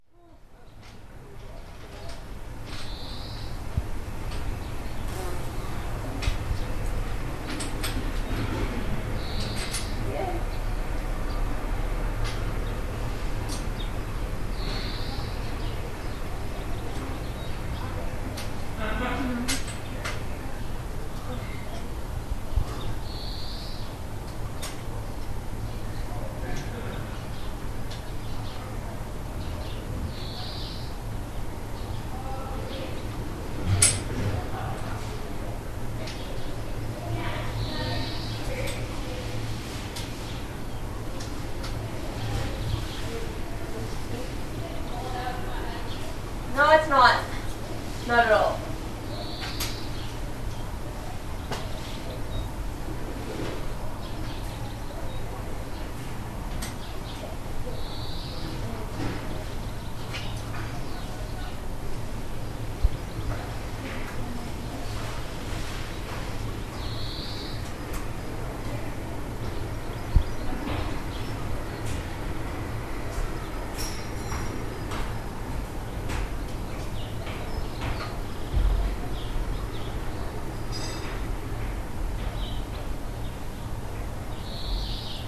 The group gets ready before our day ride